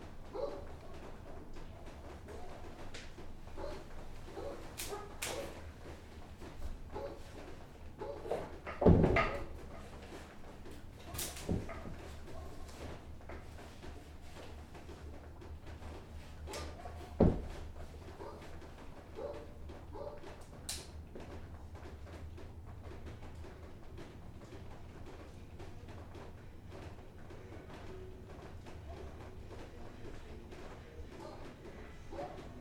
3136 Rosa Parks
This recording was done on December 31st 2009 with a stereo pair of condenser microphones, a contact mic, and a bullhorn. The house was abandoned and boarded up after a fire.